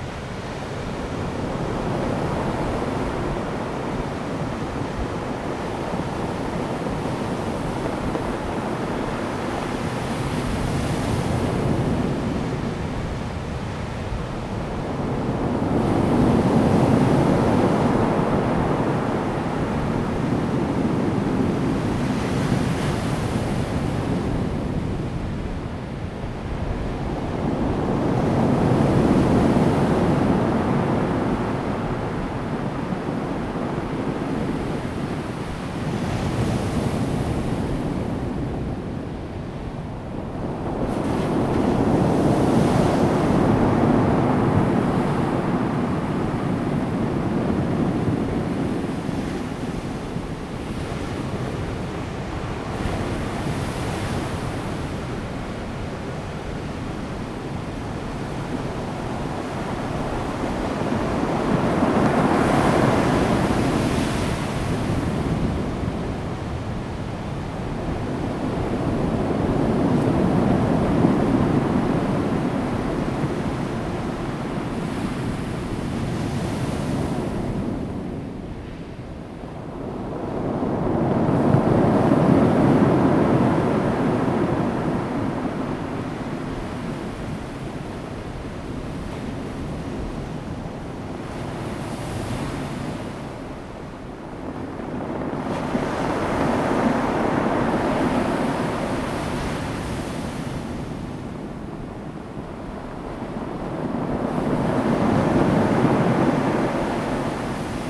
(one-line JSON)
{"title": "南田村, Daren Township - Wind and waves", "date": "2018-03-23 12:06:00", "description": "Sound of the waves, Rolling stones, Wind and waves\nZoom H2n MS +XY", "latitude": "22.25", "longitude": "120.90", "timezone": "Asia/Taipei"}